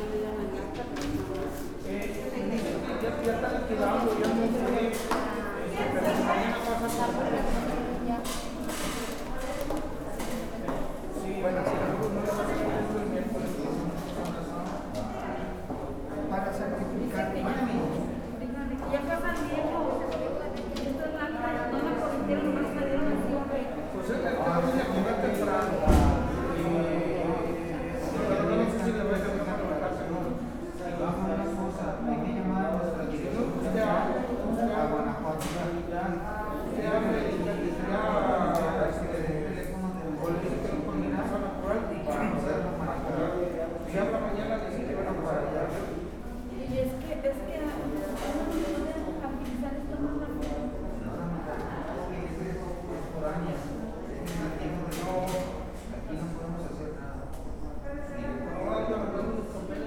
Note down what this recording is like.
In the civil registry offices. I made this recording on august 29th, 2022, at 2:25 p.m. I used a Tascam DR-05X with its built-in microphones. Original Recording: Type: Stereo, Esta grabación la hice el 29 de agosto 2022 a las 14:25 horas. Usé un Tascam DR-05X con sus micrófonos incorporados.